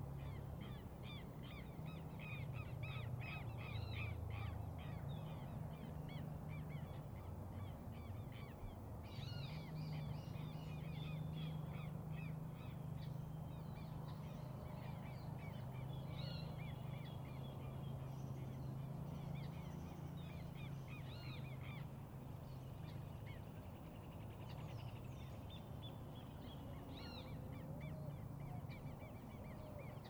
Camino de Hueso, Mercedes, Buenos Aires, Argentina - Del Campo a la Ruta 2
Recorriendo el Camino de Hueso, desde los límites rurales de Mercedes hasta la Ruta Nacional 5
17 June 2018, ~18:00